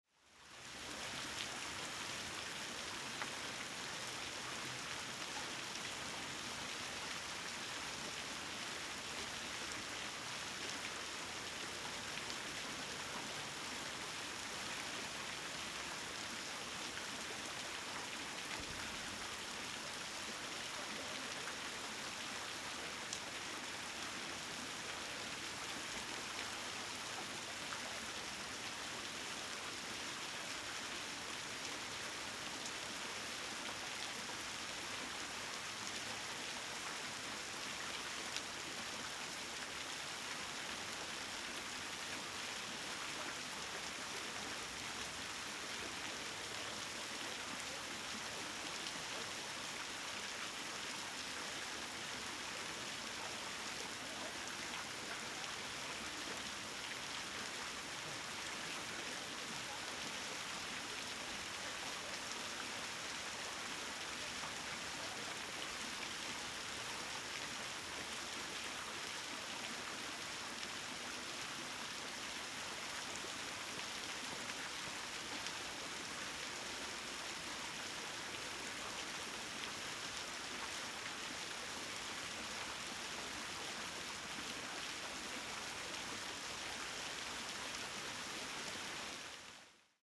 Der Brunnen aufgenommen weiter weg.
Salzburg, Austria, 17 April 2007, ~23:00